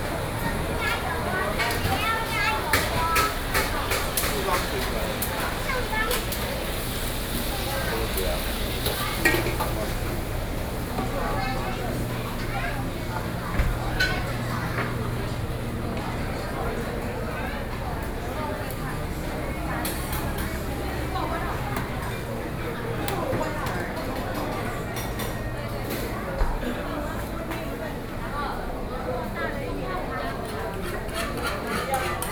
Lane, Mínshēng St, New Taipei City - Food Street
New Taipei City, Taiwan, 13 November, 17:41